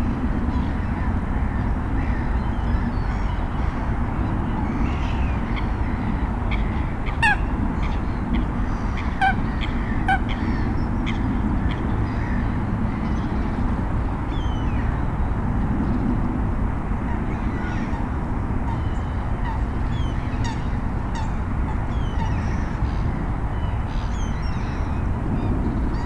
Thamesmead, UK - Southmere 3
Recorded with a stereo pair of DPA 4060s and a Marantz PMD661.
London, UK, 11 February 2017, ~15:00